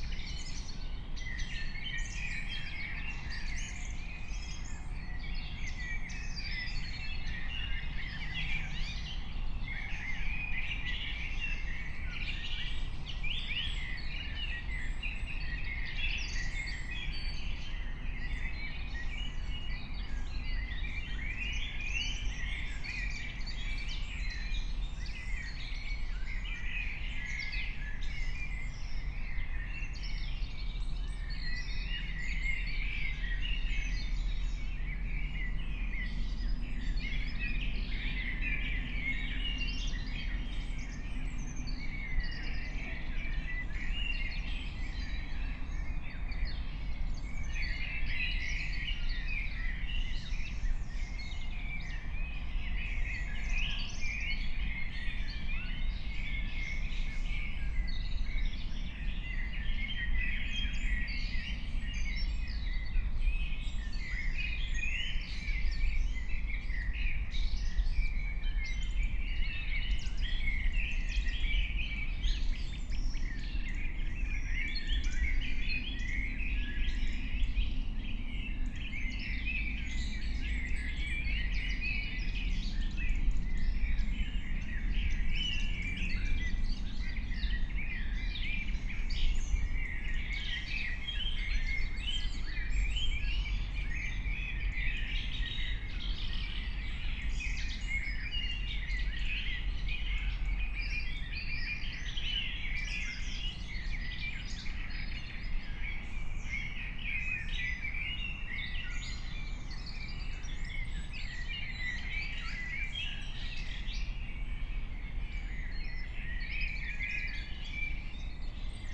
05:15 Berlin, Königsheide, Teich - pond ambience